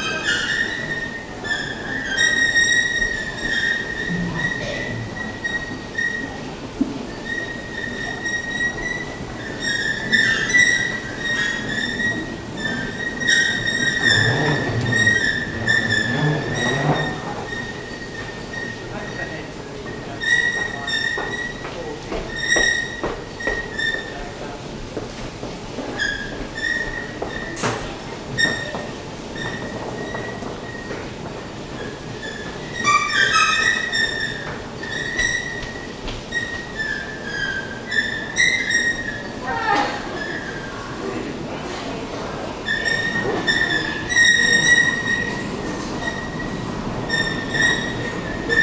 wien iii. - wien-mitte s-bahn rolltreppe
wien-mitte s-bahn rolltreppe